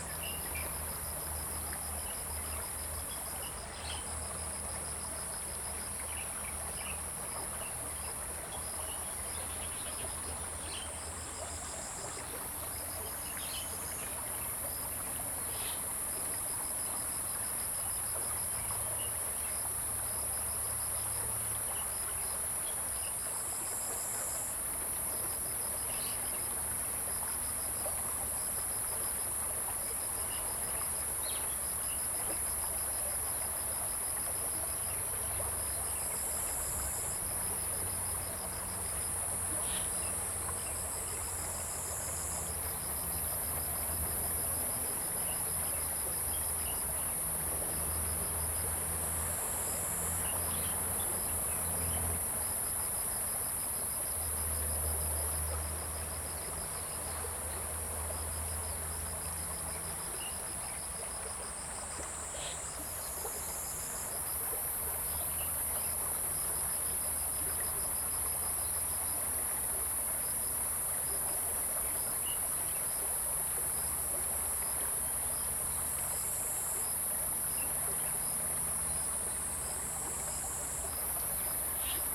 TaoMi River, 桃米里 埔里鎮 - Stream and Birds
Stream and Birds, Bird calls
Zoom H2n MS+XY
Nantou County, Puli Township, 水上巷, 10 June, ~08:00